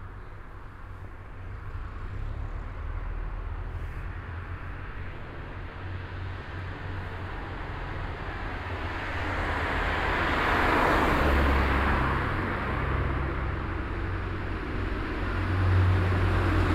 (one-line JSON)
{"title": "tandel, veianerstrooss, traffic", "date": "2011-09-17 19:39:00", "description": "At the through road of the village. The sound of the dense traffic, starting with a bus that comes from the nearby bus station.\nTandel, Veianerstrooss, Verkehr\nAn der Durchgangsstraße des Dorfes. Das Geräusch von dichtem Verkehr, es beginnt mit einem Bus, der aus dem nahe gelegenen Busbahnhof kommt.\nTandel, Veianerstrooss, trafic\nSur la route qui traverse le village. Le bruit d’un trafic intense ; cela commence par un bus en provenance de la gare routière située à proximité.", "latitude": "49.90", "longitude": "6.18", "altitude": "237", "timezone": "Europe/Luxembourg"}